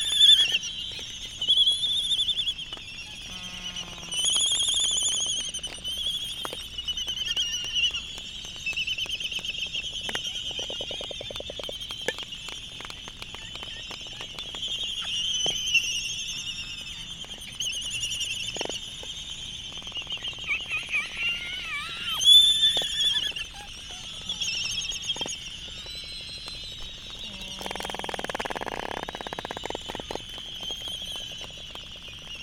Laysan albatross dancing ... Sand Island ... Midway Atoll ... fur cover tennis table bat with lavalier mics ... mini jecklin disc ... sort of ..? just rocking ... background noise ... Midway traffic ...